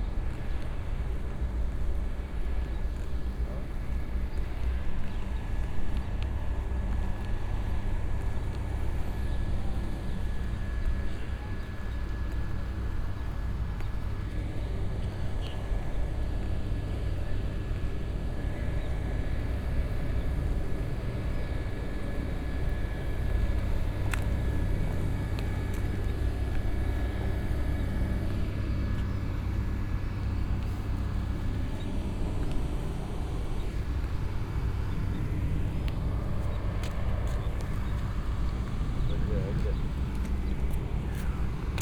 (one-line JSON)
{"title": "Fuengirola, España - Maquina de hielo de la lonja / Fish market ice machine", "date": "2012-07-18 06:30:00", "description": "Ruido de la maquinaria / Noise of the machinery", "latitude": "36.54", "longitude": "-4.62", "altitude": "2", "timezone": "Europe/Madrid"}